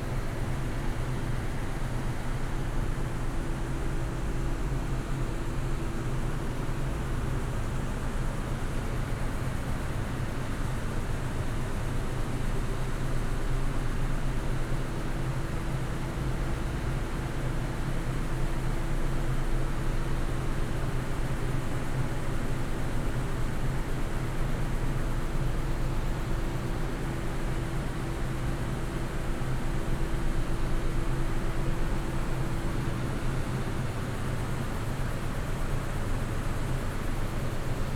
while strolling around in the Melje industrial area, i accidentally entered a private company's property. after a minute of recording the noise of an exhaust or ventilation, a guard came and threw me out.
(SD702 DPA4060)